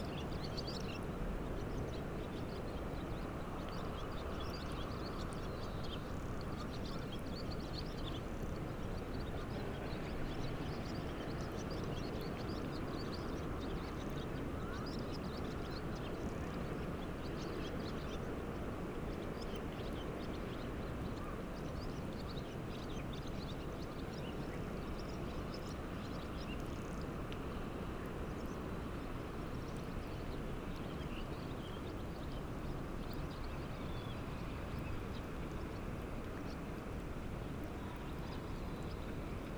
진도 갯벌_exposed mudflat on Jindo...mudflat life stirring